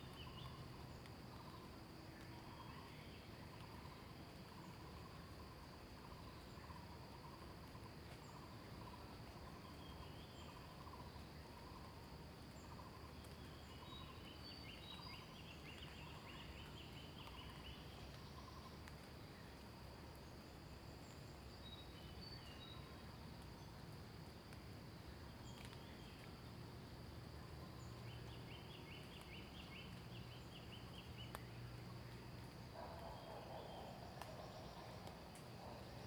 In the woods, birds sound
Zoom H2n MS+XY